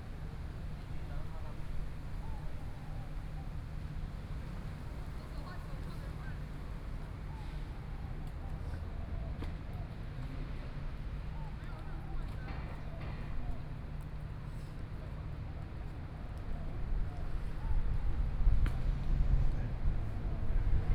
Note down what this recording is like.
Holiday in the Park, Sitting in the park, Traffic Sound, Birds sound, Many people leave to go back to the traditional holiday southern hometown, Please turn up the volume a little. Binaural recordings, Sony PCM D100+ Soundman OKM II